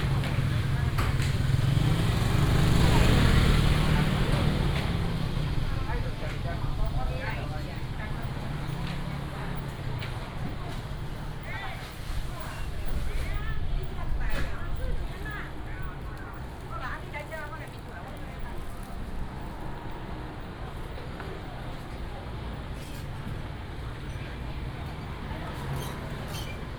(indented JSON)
{
  "title": "Nantian Rd., East Dist., Chiayi City - Walking through the traditional market",
  "date": "2017-04-18 10:08:00",
  "description": "Walking through the traditional market, Traffic sound, Bird sound, Many motorcycles",
  "latitude": "23.47",
  "longitude": "120.46",
  "altitude": "39",
  "timezone": "Asia/Taipei"
}